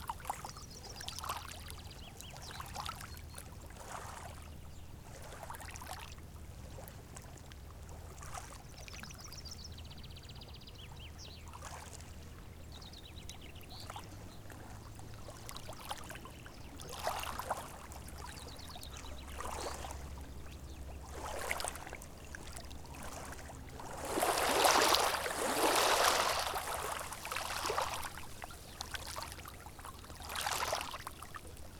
Croatia, Simuni Beach - Simuni Beach
deserted beach on a sunny windless morning. as the water gently touches the shoreline, a ship passes by at a 400 meter distance. WLD